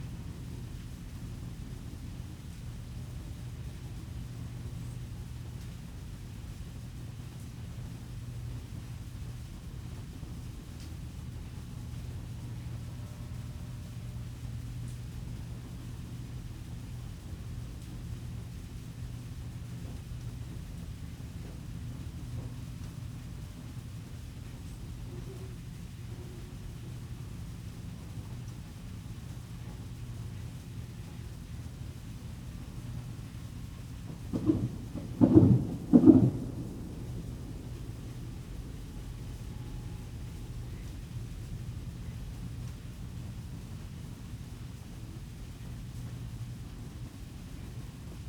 Fayette County, TX, USA - Dawn Ranch
Recorded with a pair of DPA4060s and a Marantz PMD661.
20 December 2015